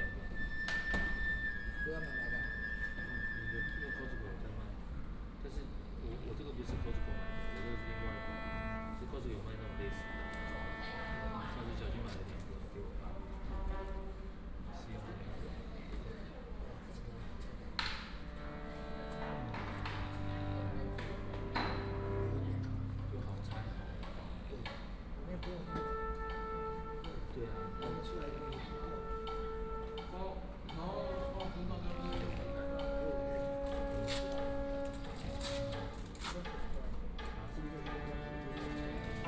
臺灣戲曲中心, Taipei City, Taiwan - before the performance
The instrument was tuned before the performance
30 October, 13:12